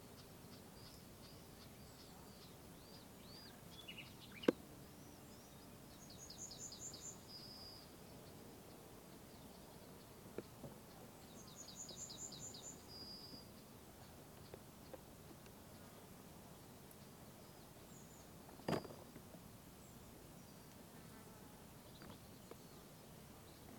Grenzdorf, Ramin, Deutschland - sound of grenzdorf
A little impression of the nature sounds in Grenzdorf, a little village next to the polish-german border. Birds, insects, the wind just doin their thing in this peaceful landscape, far away from the hectics and the chaos of this world...